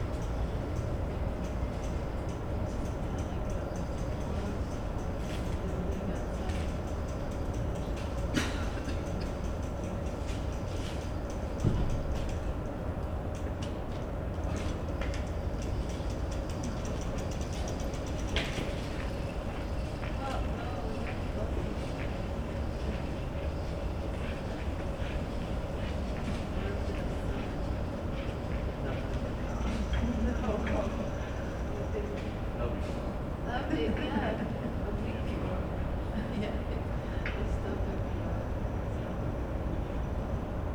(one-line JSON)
{"title": "berlin, lausitzer str.", "date": "2011-11-06 22:44:00", "description": "backyard, sunday night, some people waiting in front of cinema. end of an unsuccessful night trip to find a place with almost no foreground sounds, but mostly city hum. recorded at expressively high levels.", "latitude": "52.50", "longitude": "13.43", "altitude": "41", "timezone": "Europe/Berlin"}